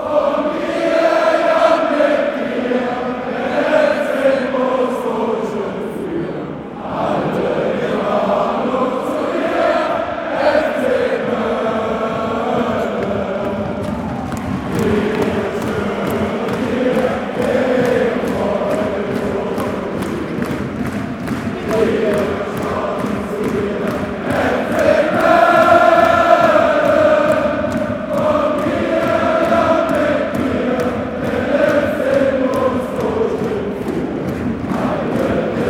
Football match of FC Köln vs. FC Kaiserslautern in the Rhein-Energie-Stadium, shortly before the start. After the team line up by the stadium speaker the fans (ca. 45.000 people) sang out the hymn of the footballclub of Cologne
20 September 2013, 18:30